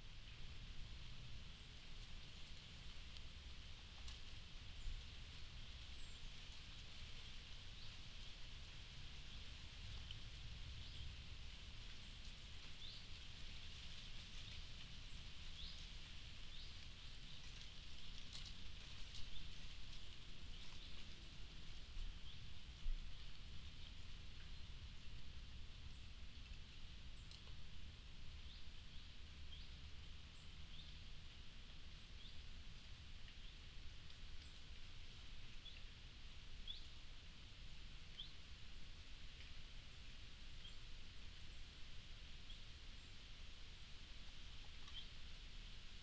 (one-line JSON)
{"title": "Schönbuch Nature Park, Heuberger Tor - Schönbuch Nature Park in early autumn", "date": "2019-09-17 17:00:00", "description": "Naturpark Schönbuch im Frühherbst: Wind bewegt trockene Kastanienblätter im Baum und auf dem Boden, Vogel klopft gegen Baumrinde. Seltene 5 Minuten ohne Flugzeug-Geräusch.\nSchönbuch Nature Park in early autumn: Wind moves dry chestnut leaves in the tree and on the ground, bird knocks against tree bark. Rare 5 minutes without aircraft noise.", "latitude": "48.55", "longitude": "9.03", "altitude": "471", "timezone": "Europe/Berlin"}